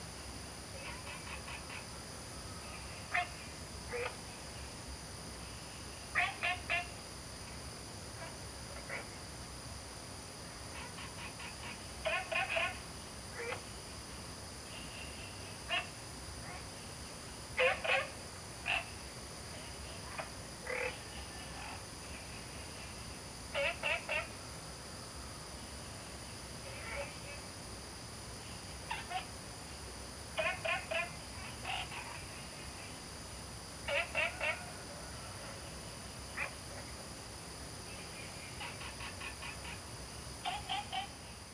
{"title": "Taomi Ln., Puli Township - In the morning", "date": "2015-08-13 05:08:00", "description": "Early morning, Frog calls, Dogs barking, Birds singing, Chicken sounds\nZoom H2n MS+XY", "latitude": "23.94", "longitude": "120.94", "altitude": "463", "timezone": "Asia/Taipei"}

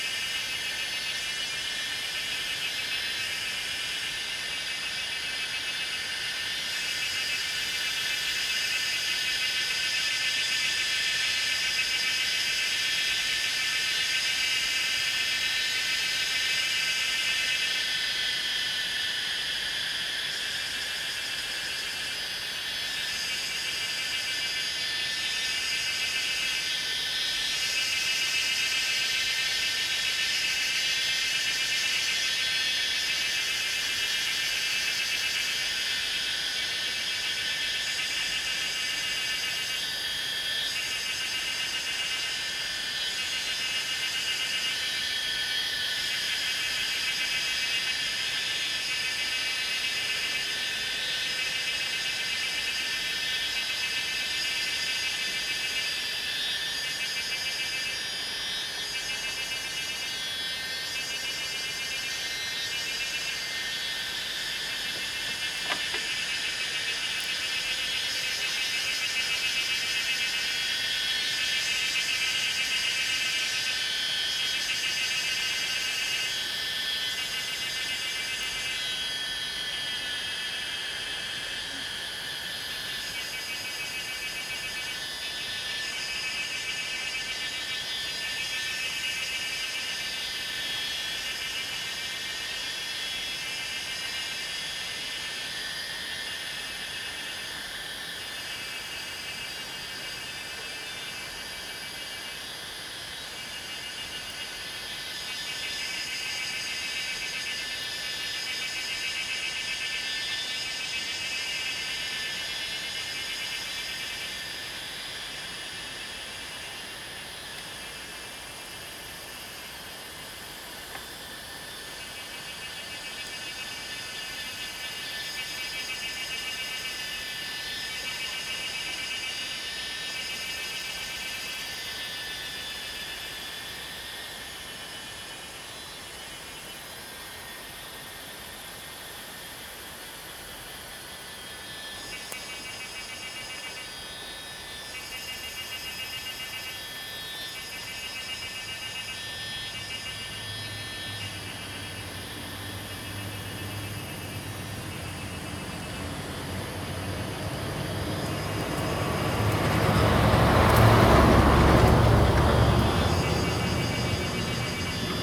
{"title": "Yumen Gate, 埔里鎮成功里 - Cicada sounds", "date": "2016-05-18 13:21:00", "description": "River sound, Cicada sounds, Faced woods\nZoom H2n MS+XY", "latitude": "23.96", "longitude": "120.89", "altitude": "452", "timezone": "Asia/Taipei"}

